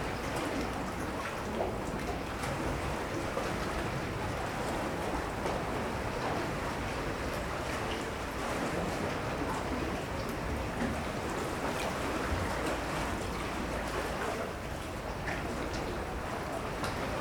Chrysopighi, Sifnos, Greece - Chrysopighi - under the bridge
recorded under the bridge that joins the two sections of Chrysopighi monastery on Sifnos. a narrow gash in the rocks, creating a reverberant space. waves, pigeons. AT8022 / Tascam DR40